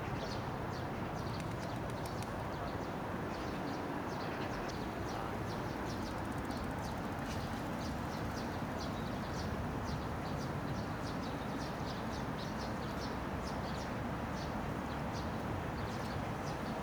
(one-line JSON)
{"title": "대한민국 서울특별시 서초구 양재동 261-23 - Yangjaecheon, Summer, Bus, Sparrow", "date": "2019-07-27 15:30:00", "description": "Yangjaecheon Stream, Summer, Monsoon, Sparrow, vehicle passing by\n양재천, 여름, 장마철, 참새", "latitude": "37.48", "longitude": "127.04", "altitude": "30", "timezone": "Asia/Seoul"}